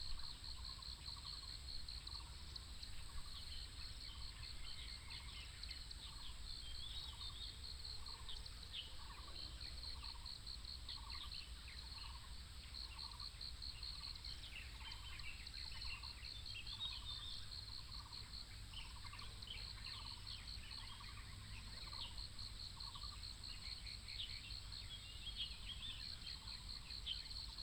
吳江村, Fuli Township - Birdsong
Birdsong, Traffic Sound
Hualien County, Taiwan, 9 October 2014